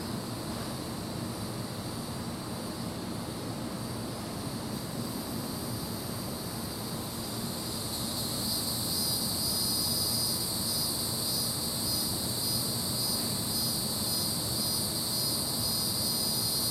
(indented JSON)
{
  "title": "Suffex Green Ln NW, Atlanta, GA, USA - A Summer Evening w/ Cicadas",
  "date": "2021-06-28 20:05:00",
  "description": "The sound of a typical summer evening near Atlanta, GA. Aside from the typical neighborhood sounds captured in previous recordings, the cicada chorus is particularly prominent around the evening and twilight hours. They start every day at about 5:30 or 6:00 (perhaps even earlier), and they continue their chorus until nightfall (at which time we get a distinctly different chorus consisting of various nocturnal insects). These are annual cicadas, meaning we hear them every single year, and are thus distinct from the 17-year cicadas being heard in other places in the country.",
  "latitude": "33.85",
  "longitude": "-84.48",
  "altitude": "299",
  "timezone": "America/New_York"
}